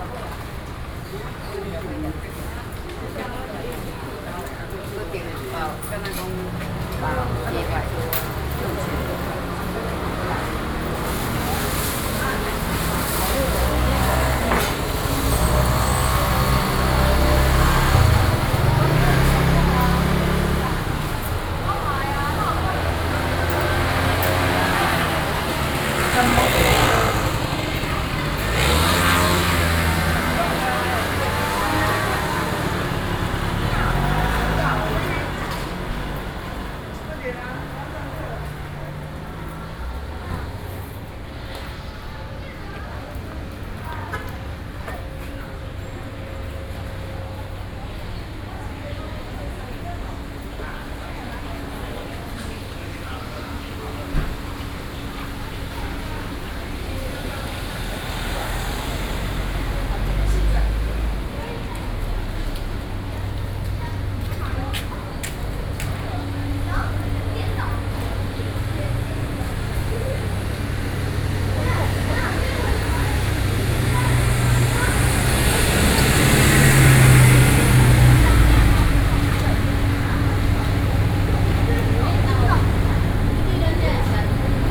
No., Lane, Zhōngzhèng Road, Xindian District - Soundwalk
The market is being closed down, Zoom H4n+ Soundman OKM II